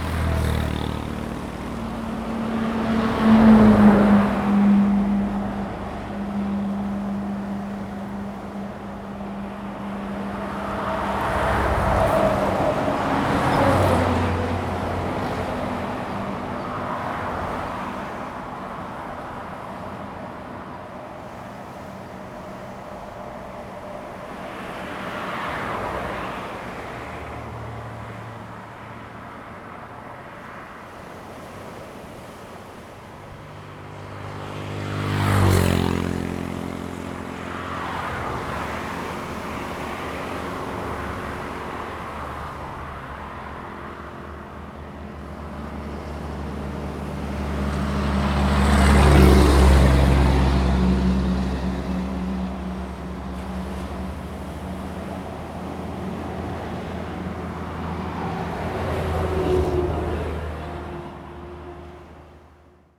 南興村, Dawu Township - Traffic and the waves
Sound of the waves, In the side of the road, Traffic Sound, The weather is very hot
Zoom H2n MS +XY
Taitung County, Taiwan, 5 September 2014, 16:09